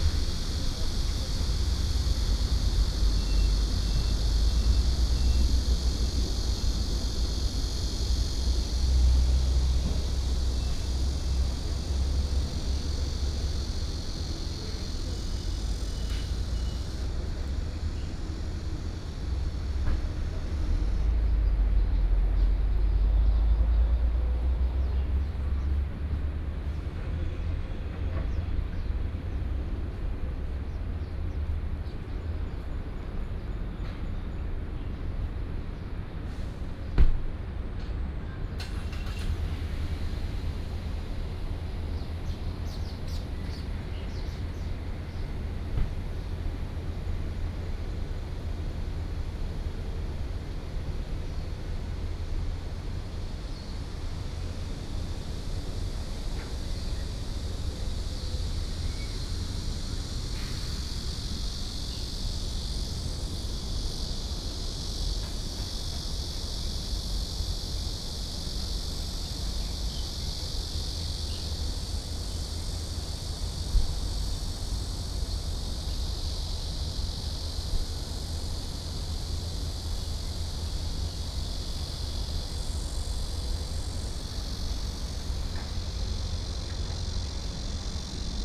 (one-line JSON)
{"title": "港口路, Toucheng Township - next to the parking lot", "date": "2014-07-07 12:02:00", "description": "next to the parking lot, Cicadas sound, Birdsong, Very hot weather, Traffic Sound", "latitude": "24.88", "longitude": "121.84", "altitude": "5", "timezone": "Asia/Taipei"}